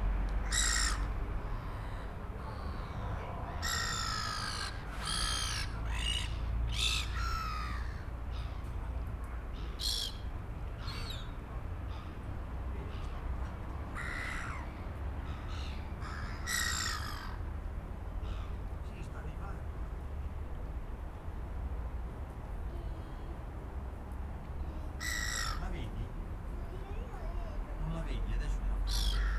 Schiffsteg, warten auf Überfahrt, Luino - Cannobio
Schiff, Luino-Cannobio, Winteratmosphäre, Möven, Motorengeräusche, Wartende, Passagiere